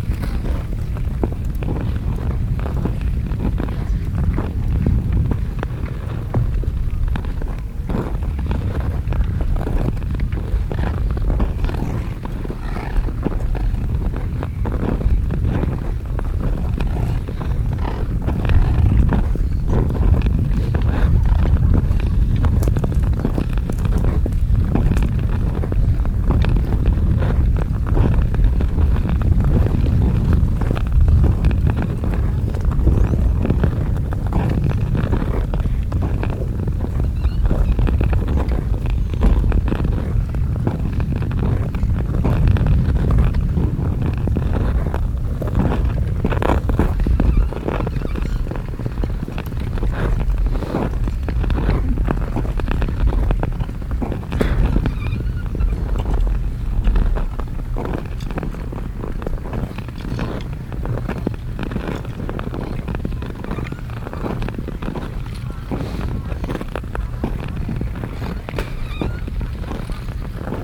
Vodní nádrž Hostivař, iceskate expedition
trip along the botič creek to the frozen dam in Hostivař
11 February 2012